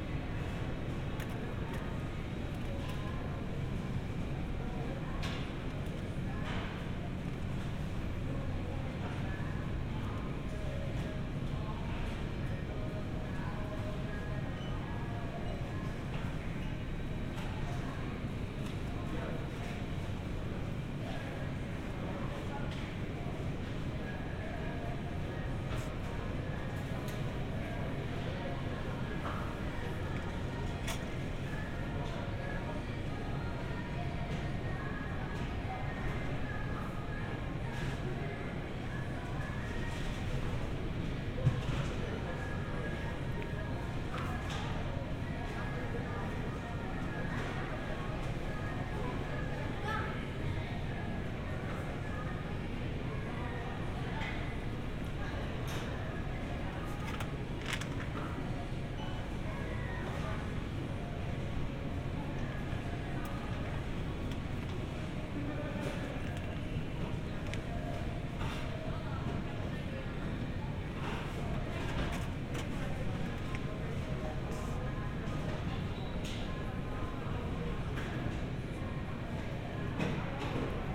A quick round of shopping at the local Publix with a family member. Here you can listen to all the typical store sounds: barcode scanners beeping, shopping carts, some faint music in the background, etc. The store was less busy than usual because of the time of night and people were remaining socially distanced. This was recorded with a pair of Roland CS-10EM binaural earbuds connected to the Tascam DR-100mkiii, which I kept in my sweatshirt pocket. User interference was kept to a minimum, although a few breathing/mouth sounds may have come through in parts of the recording due to the mics being mounted directly to my head.
Georgia, United States